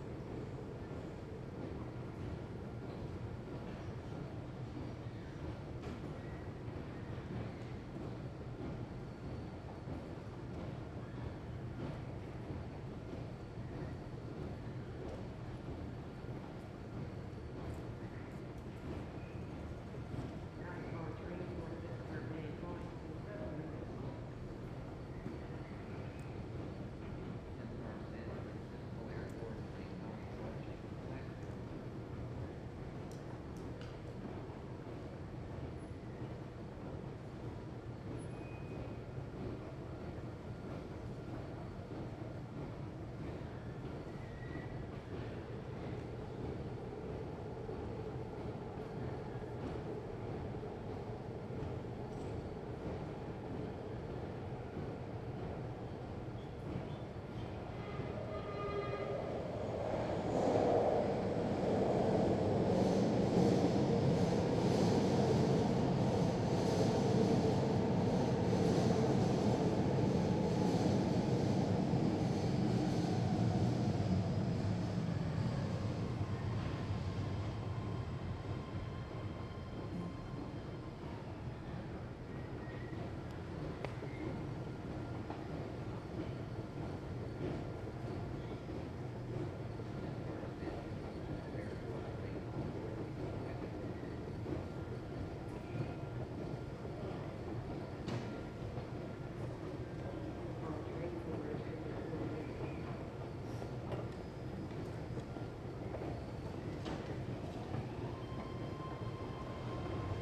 19th street Bart station, downtown Oakland
19th street Bart station, downtown Oakland